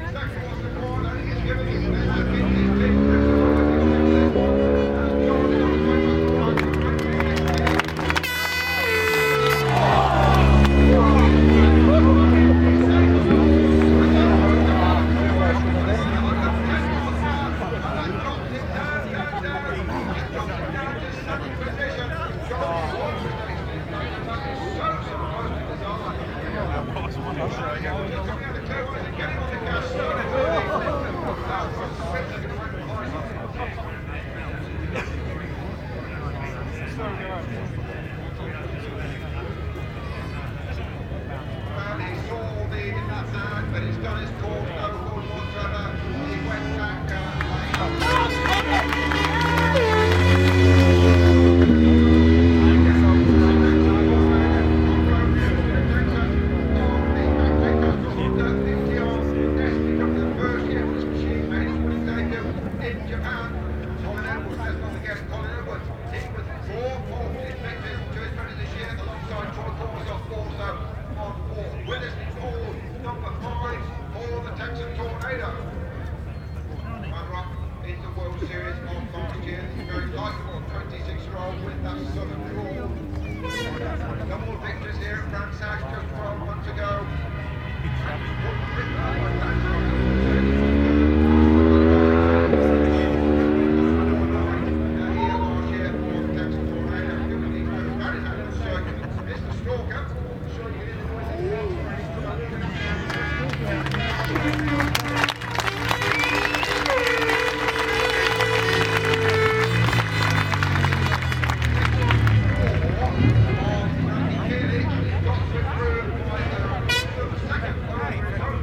Brands Hatch Circuits Ltd, Brands Hatch Road, Fawkham, Longfield, United Kingdom - World Superbikes 2000 ... Superpole (cont) ...
World Superbikes 2000 ... Superpole (contd) ... one point stereo mic to minidisk ...